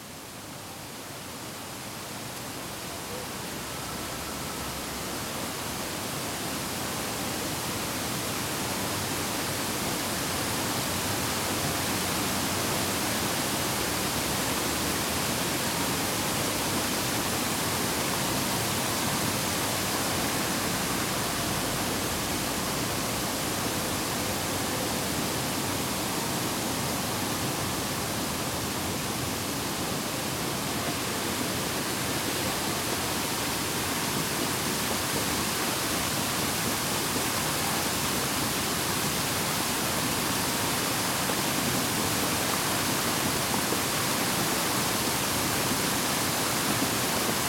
Campus Martius, Detroit, MI, USA - Campus Martius Fountain (Downtown Detroit)
From the center of downtown Detroit comes a recording of the large Campus Martius "Woodward Fountain". I recorded this on a muggy late June evening in 2014 on a Tascam DR-07. You can hear variations in how the water is being shot into the air and falling back and then over the edge, as well as some nice urban ambiance.